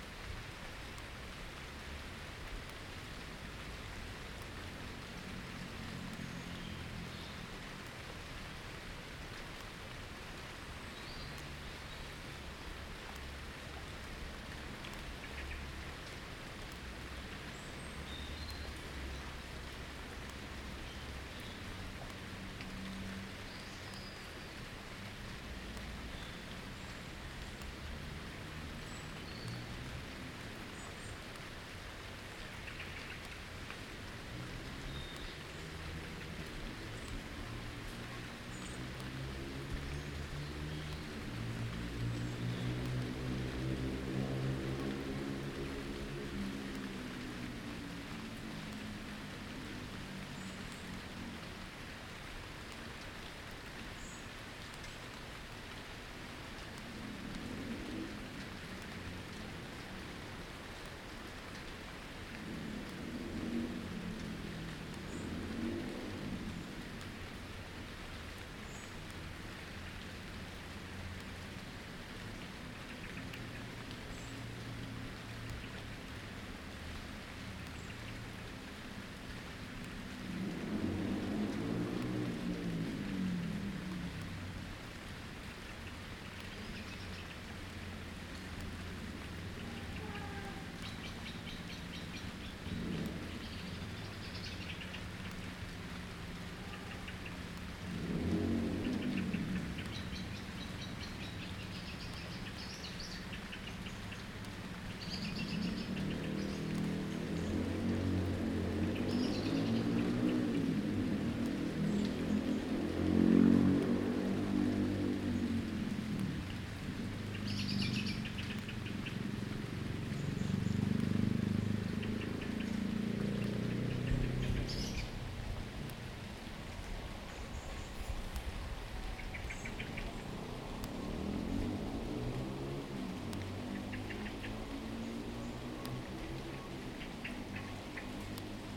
Walking Festival of Sound
13 October 2019
Abandoned playground. Water dripping from slide.